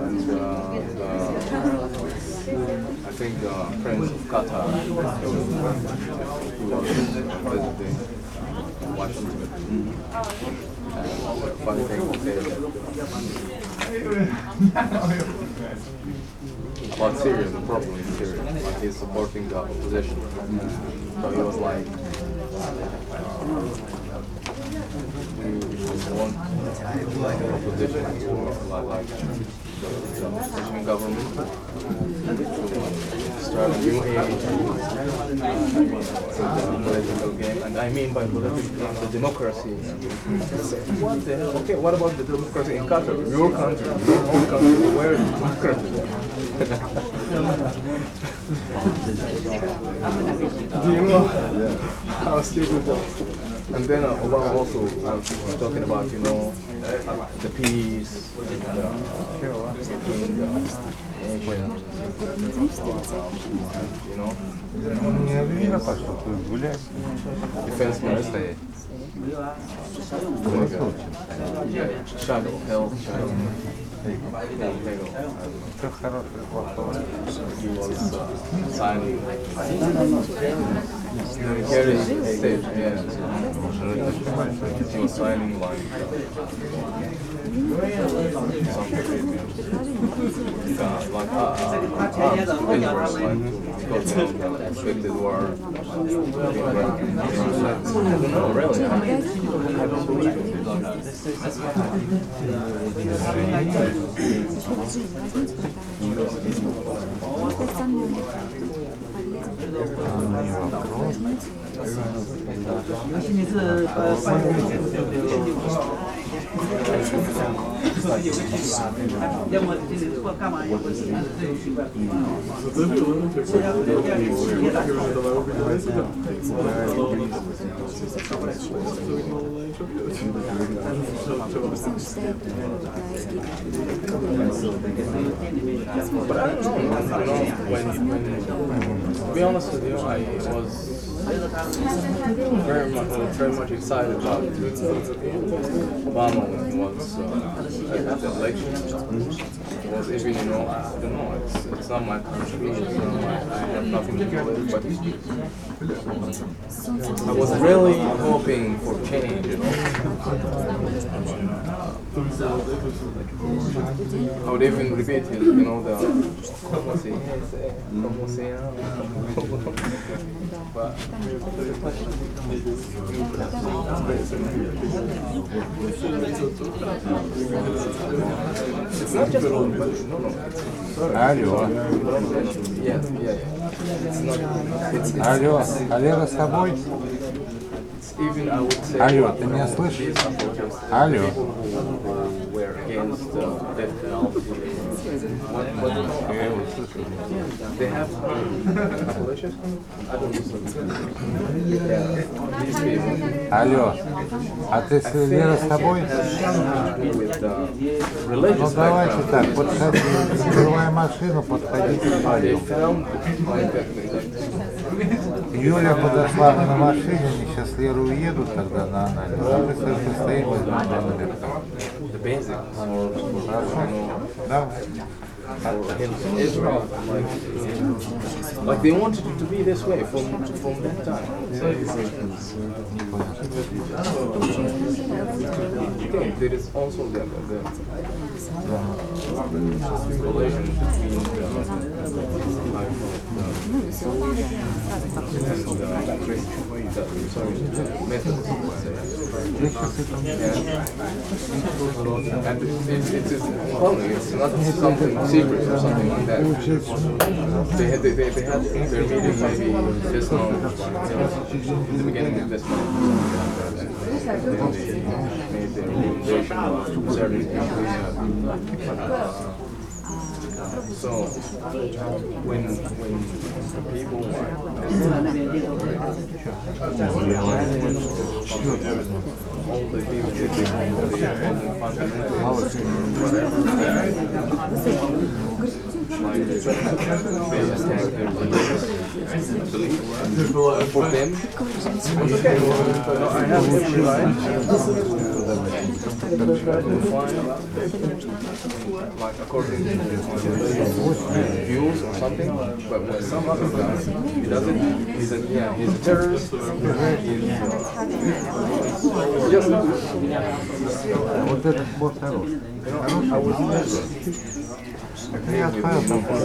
Bratislava-Petržalka, Slovenská republika - At the Alien Police Department II
Queueing at Bratislava's Alien Police Department
Bratislavský kraj, Slovensko, European Union